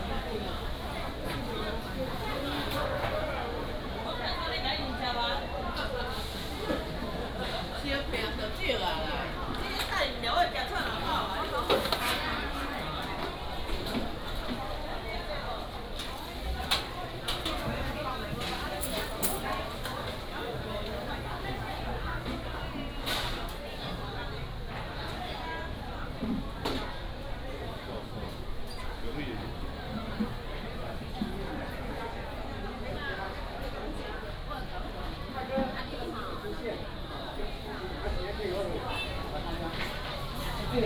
Jinhua Market, West Central Dist., Tainan City - walking in the market
walking in the market
18 February, ~11:00